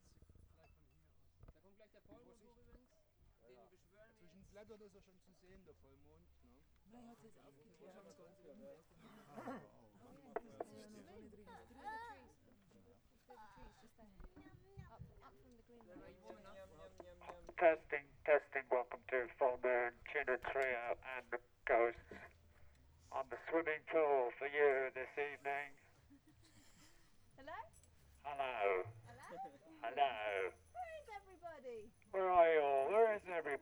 A fond tribute to 4 musicians (Tuna Trio and the Ghost) who were having a last rehearsal before a concert on the platform of a swimmingpool. Together with other chance visitors we were attracted by the sound of music in the pitch dark. The singer (Neil from London) with a banjo was amplified trough a megaphone, a contrabass from sibiria, a cajón player and a saxophone player from saxony. Recorded with a handheld Zoom H2.
NB, Bayern, Deutschland, July 2011